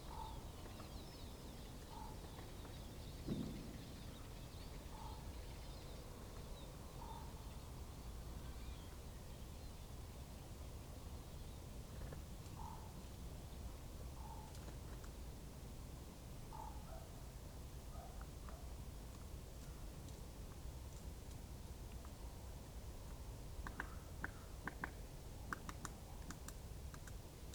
bad freienwalde/oder: freienwalder landgraben - the city, the country & me: woodpecker
a woodpecker, birds, barking dogs, bangers and a train in the distance
the city, the country & me: december 31, 2015